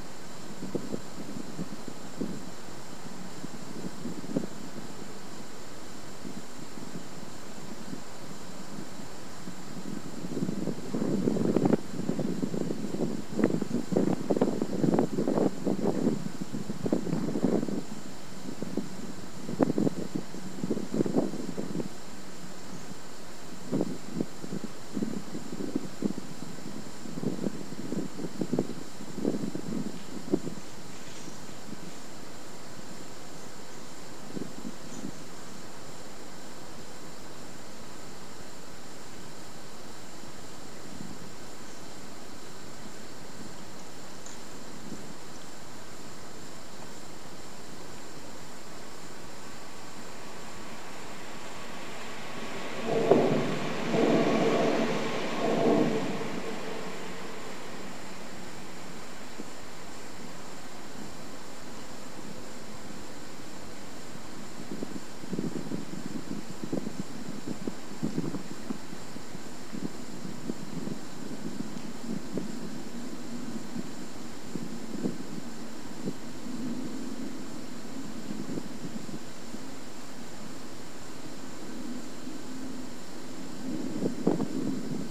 Sankey Valley Park, Old Hall. - Wind Underneath A Viaduct At 5am
The Wind underneath the Liverpool - Manchester train line viaduct in Sankey Valley Park, Old Hall, Warrington.
Great Sankey, Warrington, UK, October 2009